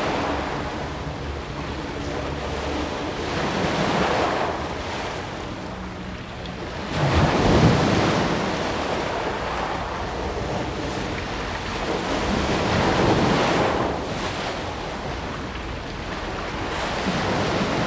淡水海關碼頭, New Taipei City - Sound wave
At the quayside, Sound wave, The sound of the river
Zoom H2n MS+XY
New Taipei City, Taiwan, 24 August, ~16:00